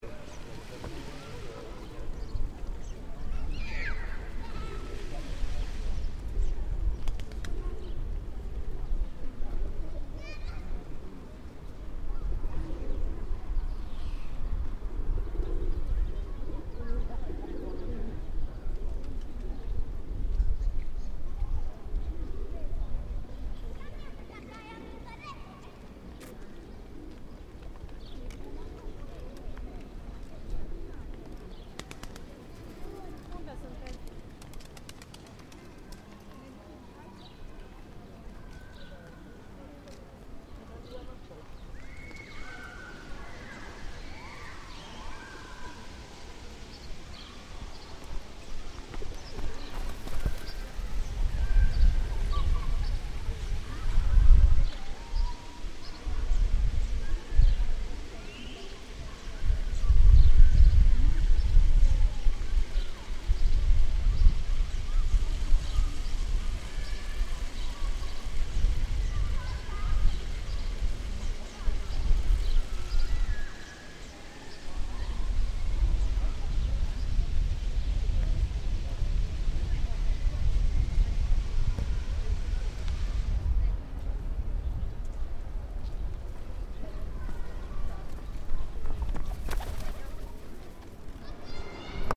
Piata Mare Sibiu
Children playing in the main square with water